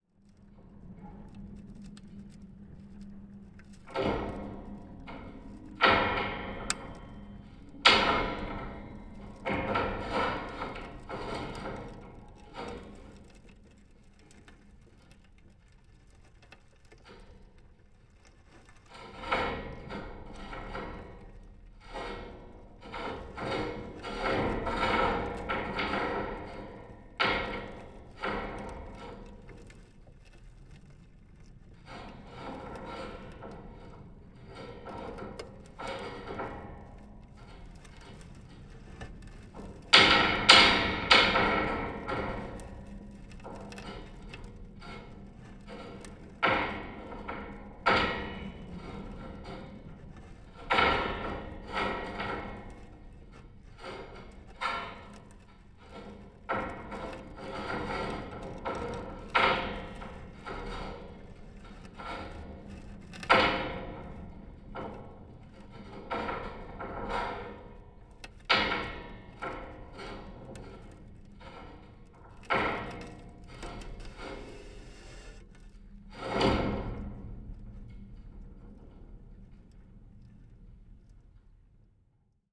Water tower lightning rod, Polesie, Łódź, Poland - Water tower lightning rod, Polesie, ?ód?, Poland
contact mic recording made during a sound workshop in Lodz. organized by the Museum Sztuki of Lodz.
4 April